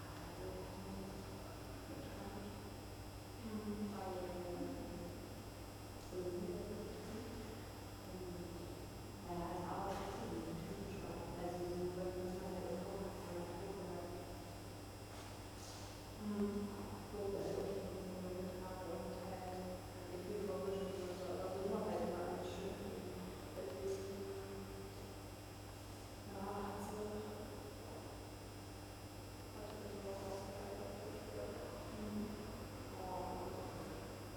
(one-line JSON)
{"title": "Berlin Klosterstr - quiet subway station", "date": "2009-12-06 22:15:00", "description": "sunday night quiet subway station. buzz and beeps of lamps and electric devices. people talking. steps.", "latitude": "52.52", "longitude": "13.41", "altitude": "39", "timezone": "Europe/Berlin"}